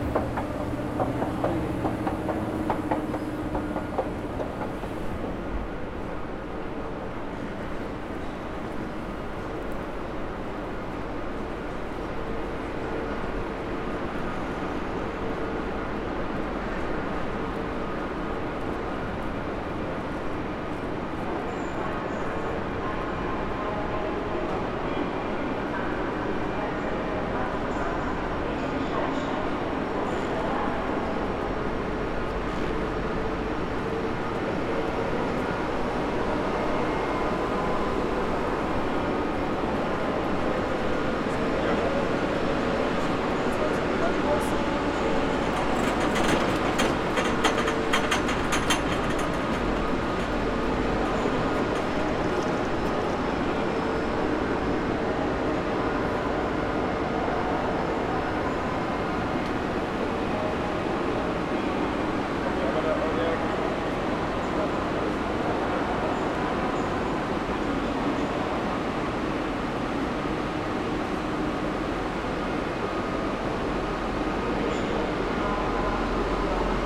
Am Hauptbahnhof Ebene A // gegenüber Gleis, Frankfurt am Main, Deutschland - Frankfurt, Track 18, 200515
While during the lockdown no trains left for Amsterdam Centraal without any anouncement, on this day a train is leaving. A man is asking for a Euro, he has as he says only 72 Euro but needs 73, he then asks other people.
Hessen, Deutschland, 15 May 2020